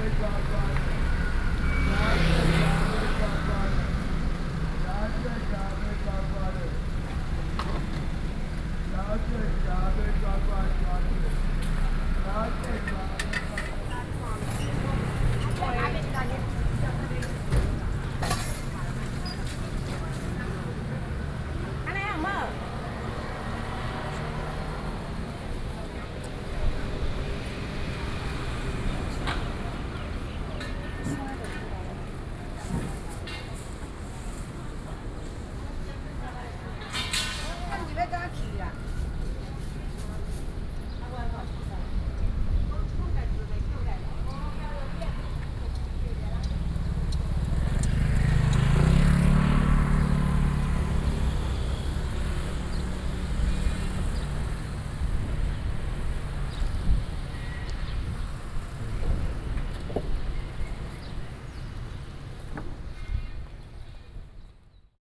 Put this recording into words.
Traffic Sound, Walking through the market, Sony PCM D50+ Soundman OKM II